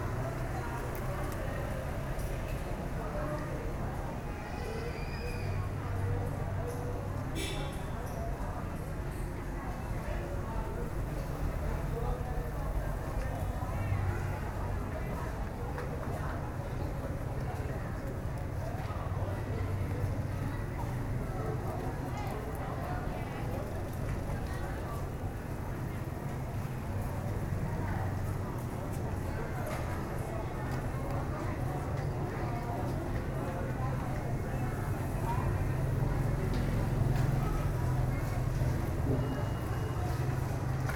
At an intersection of street No. 118 with another street in a neighborhood in Phnom Penh, after a day of wandering (collecting images and recordings), we sit in creaky wicker chairs on the veranda of a corner cafe. The sun is going down; a white-robed monk comes in and passes the shopkeeper a slip of white paper, and then shuffles out.
8 October, 11:50